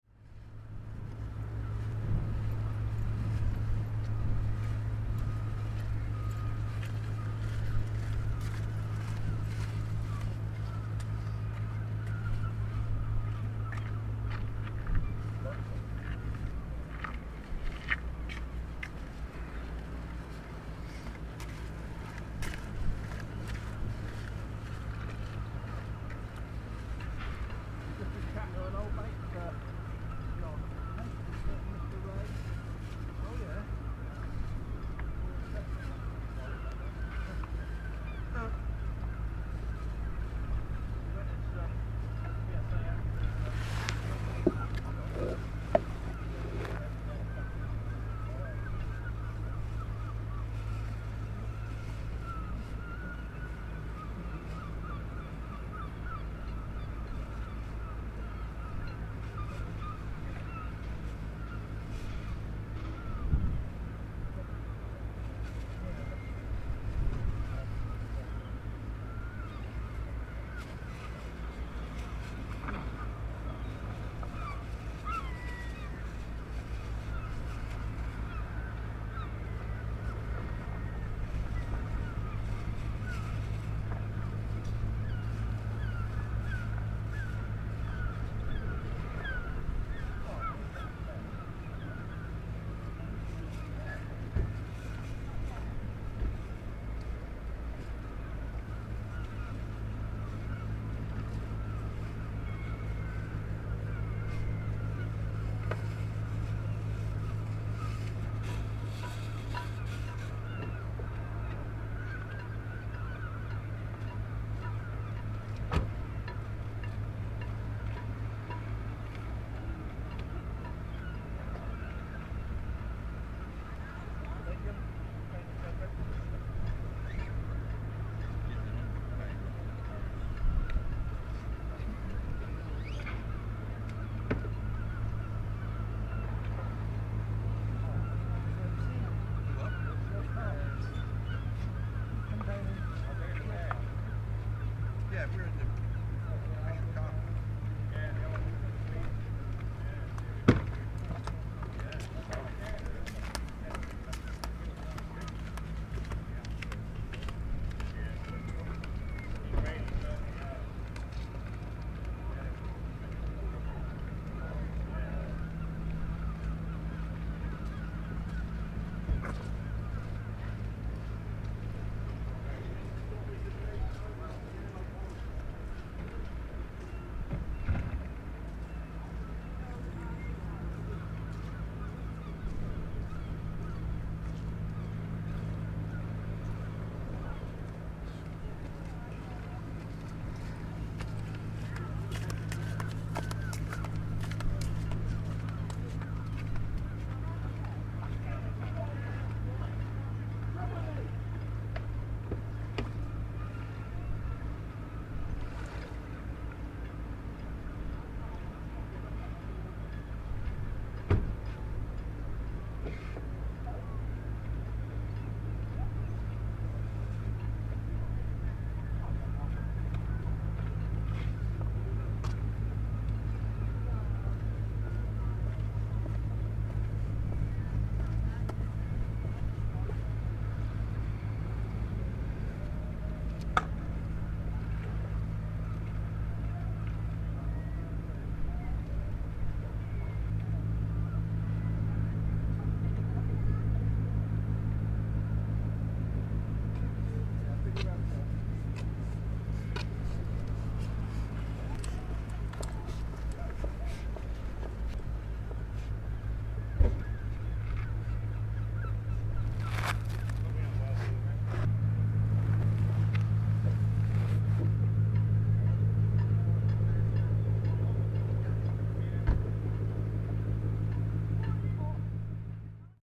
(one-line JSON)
{"title": "World Listening Day at Portland Castle, Dorset, UK - Portland Castle, World Listening Day", "date": "2012-07-18 10:00:00", "description": "Portland Castle on World Listening Day", "latitude": "50.57", "longitude": "-2.45", "altitude": "2", "timezone": "Europe/London"}